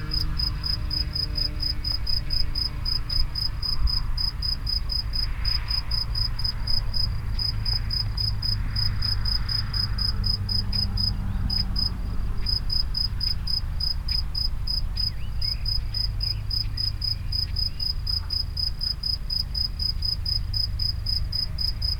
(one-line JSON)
{"title": "Niévroz, Locusts near the Henri Bridge - Criquets vers le Pont Henri", "date": "2011-04-30 16:25:00", "description": "Niévroz, Locusts near the Henri Bridge.\nCriquets vers le Pont Henri.", "latitude": "45.83", "longitude": "5.07", "altitude": "183", "timezone": "Europe/Paris"}